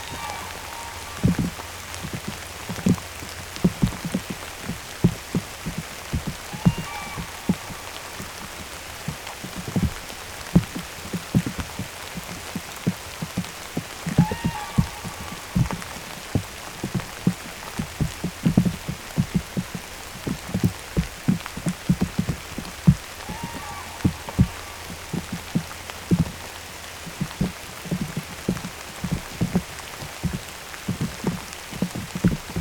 Rain in the evening, Taavi Tulev